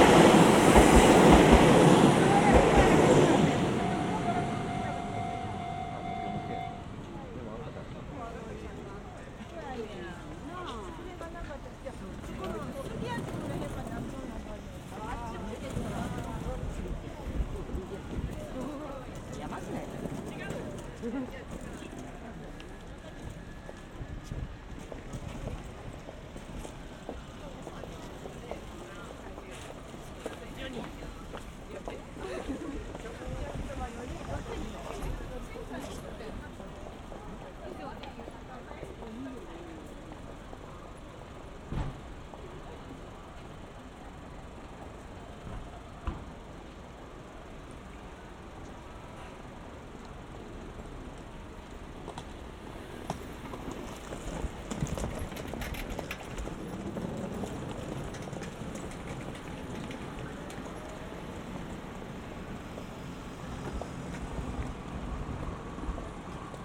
Anshusajikicho, Yamashina Ward, Kyoto, Kyoto Prefecture, Japan - 201811241756 JR Yamashina Station Roundabout Train Crossing
Title: 201811241756 JR Yamashina Station Roundabout Train Crossing
Date: 201811241756
Recorder: Zoom F1
Microphone: Roland CS-10EM
Location: Yamashina, Kyoto, Japan
GPS: 34.992086, 135.817323
Content: trains crossing people conversation japanese traffic yamashina jr old man young woman binaural japan